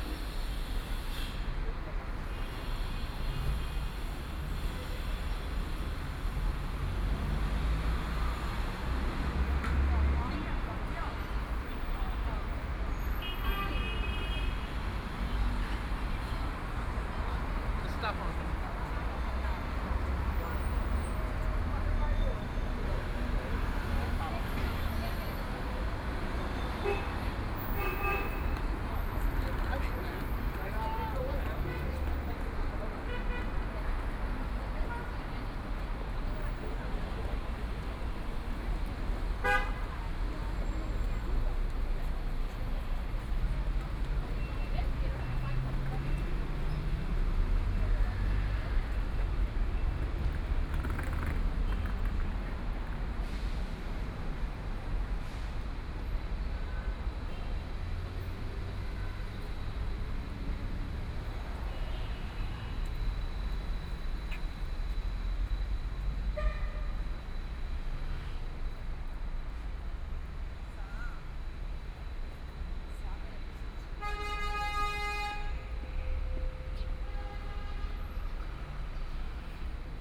Yuyuan Road, Shanghai - walking in the Street
walking in the Street, Binaural recording, Zoom H6+ Soundman OKM II